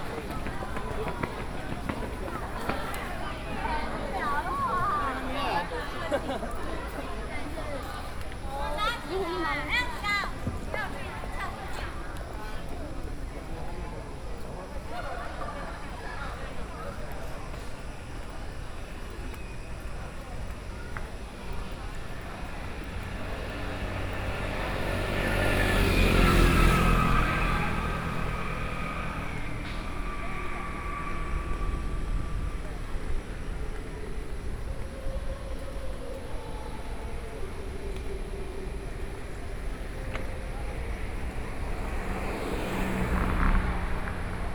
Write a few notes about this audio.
The end of high school students performing, Sony PCM D50 + Soundman OKM II